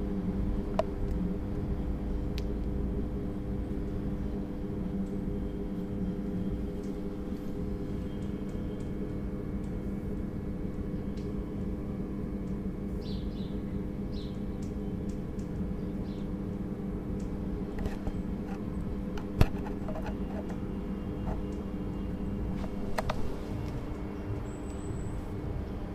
Lüftung vor dem alten Brauereigebäude